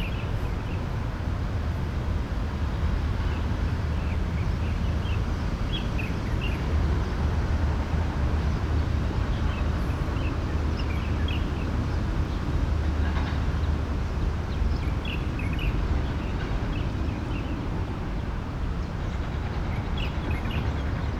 {
  "title": "Labor Park, Kaohsiung - Hot afternoon",
  "date": "2012-04-05 15:03:00",
  "description": "in the Labor Park, Sony PCM D50",
  "latitude": "22.61",
  "longitude": "120.31",
  "altitude": "4",
  "timezone": "Asia/Taipei"
}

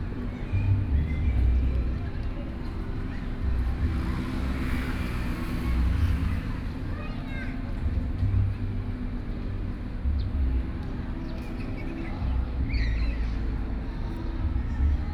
{
  "title": "Yuanshan Park - Taipei EXPO Park - Holiday parks",
  "date": "2013-08-18 14:34:00",
  "description": "Dove, Aircraft flying through, Dogs barking, Sony PCM D50 + Soundman OKM II",
  "latitude": "25.07",
  "longitude": "121.52",
  "altitude": "9",
  "timezone": "Asia/Taipei"
}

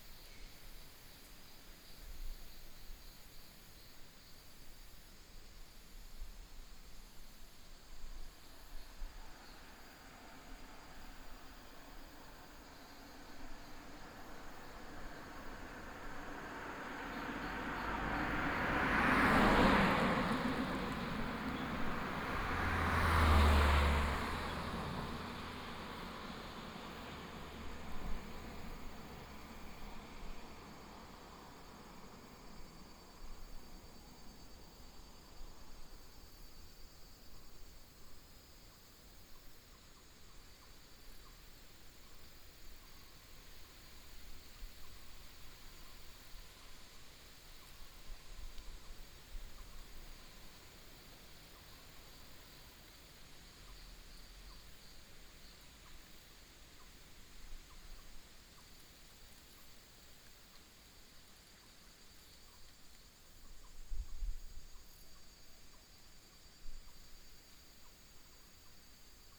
{
  "title": "Gaoraoping, Fuxing Dist. - Bird call",
  "date": "2017-08-14 15:37:00",
  "description": "traffic sound, Bird call, wind, Small road, Forest area",
  "latitude": "24.80",
  "longitude": "121.30",
  "altitude": "341",
  "timezone": "Asia/Taipei"
}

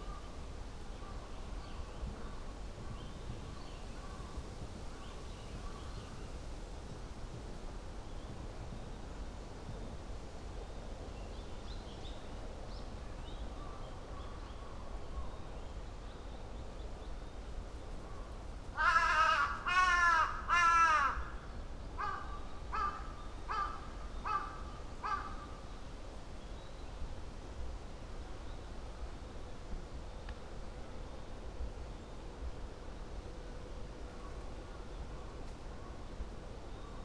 {
  "title": "Tokyo, Shibuya, Yoyogi park - park ambience",
  "date": "2013-03-28 16:39:00",
  "latitude": "35.68",
  "longitude": "139.70",
  "altitude": "50",
  "timezone": "Asia/Tokyo"
}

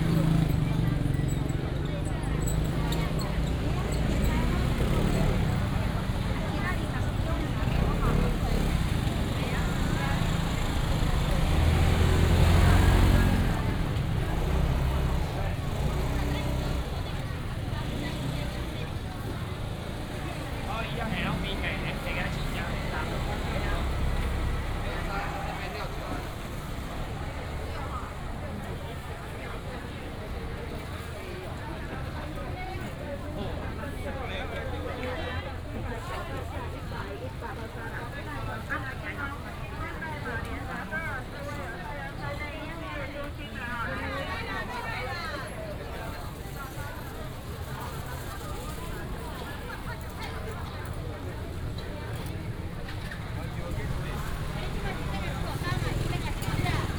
{
  "title": "Changshou St., Changhua City - Walking in the traditional market",
  "date": "2017-03-18 09:24:00",
  "description": "Walking in the traditional market",
  "latitude": "24.08",
  "longitude": "120.55",
  "altitude": "25",
  "timezone": "Asia/Taipei"
}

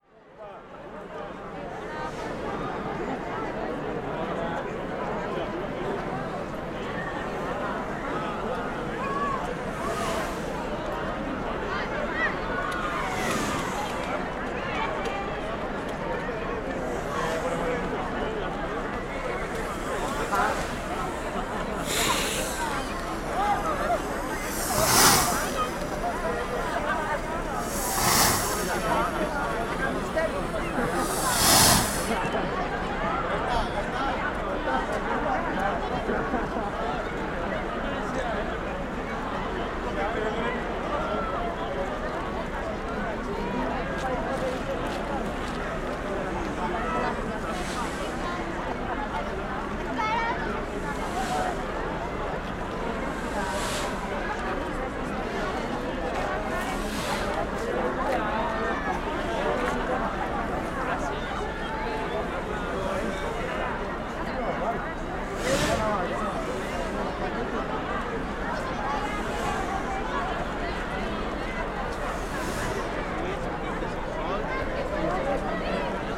{
  "title": "Plaça d'En Blasc, Vilafranca, Castelló, Espanya - Moment de la crema de la barraca de Sant Antoni 2022",
  "date": "2022-02-26 20:50:00",
  "description": "Paisatge sonor de la plaça En Blasc d'Alagó durant l'encesa de la barraca de Sant Antoni 2022.",
  "latitude": "40.43",
  "longitude": "-0.26",
  "altitude": "1131",
  "timezone": "Europe/Madrid"
}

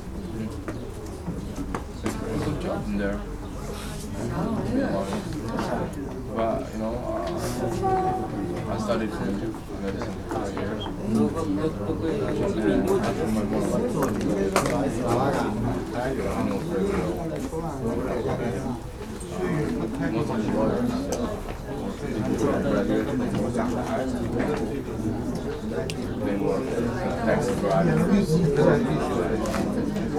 {
  "title": "Bratislava-Petržalka, Slovenská republika - At the Alien Police Department I",
  "date": "2013-04-24 06:46:00",
  "description": "Queueing at Bratislava's Alien Police Department",
  "latitude": "48.12",
  "longitude": "17.12",
  "altitude": "135",
  "timezone": "Europe/Bratislava"
}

